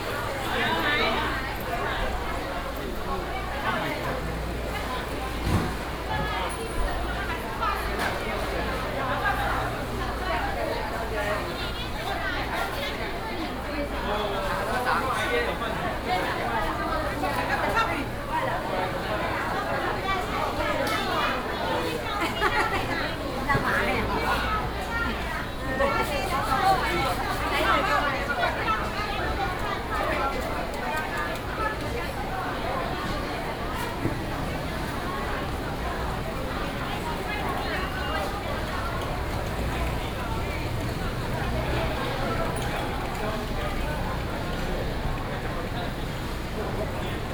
霧峰公有零售市場, Taichung City - traditional market
traditional market, traffic sound, vendors peddling, Binaural recordings, Sony PCM D100+ Soundman OKM II
Taichung City, Taiwan, 19 September 2017, ~10:00